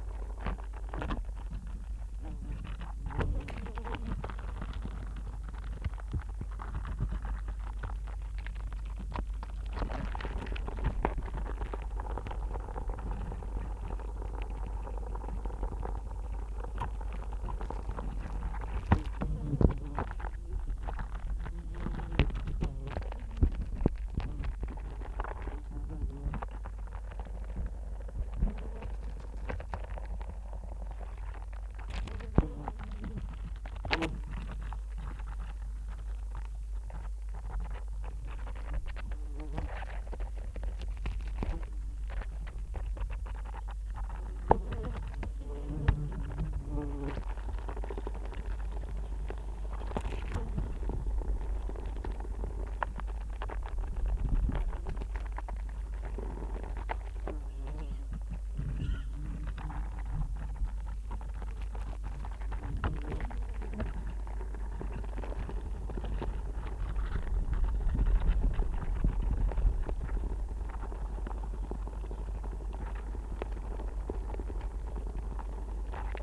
Forest Garden, UK - fallen apricot
fallen fruit attracting wasps and flies
England, United Kingdom, 20 July